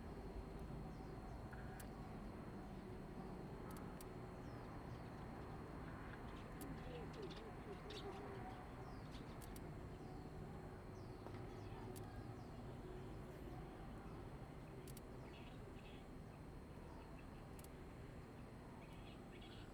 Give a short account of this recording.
At level crossing, Birds, Train traveling through, The weather is very hot, Zoom H2n MS+XY